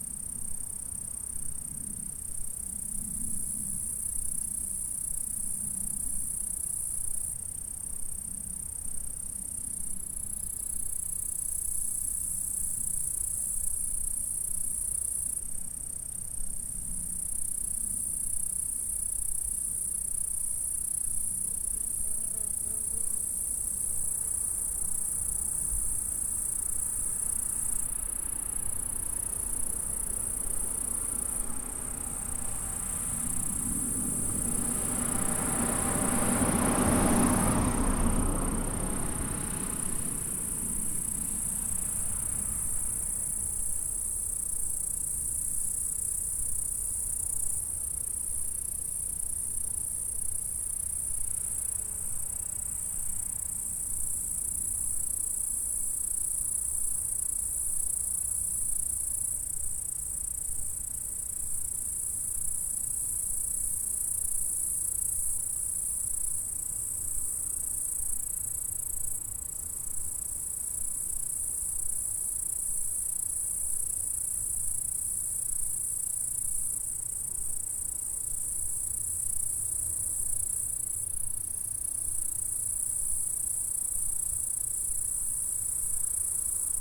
Saint-François-de-Sales, France - insectes
Stridulations dans la prairie.
Auvergne-Rhône-Alpes, France métropolitaine, France